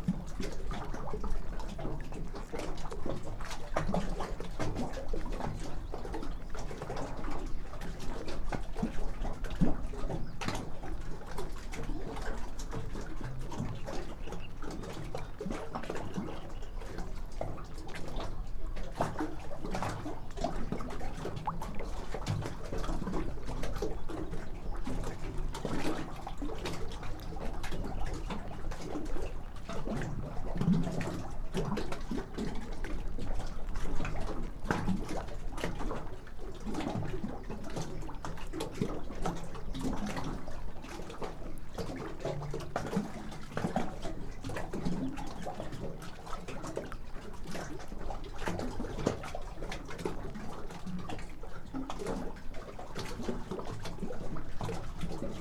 Lithuania, Dusetos, on the pontoon
standing on the pontoon footbridge